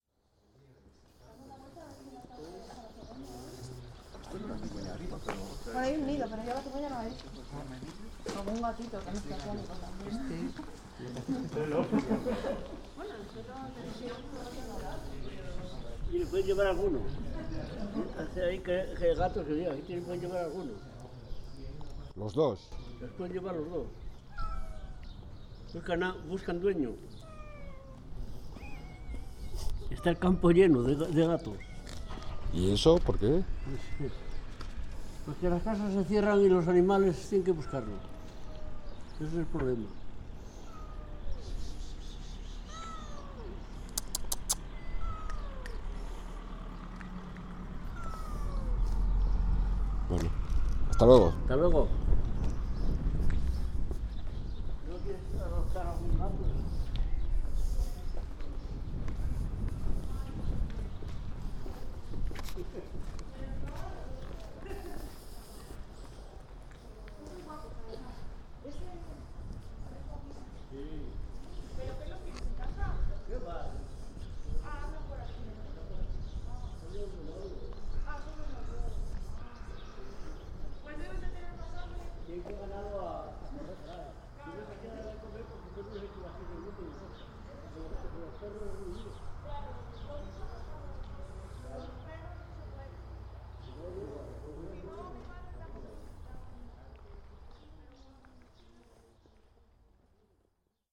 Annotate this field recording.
conversación con el señor al lado de la iglesia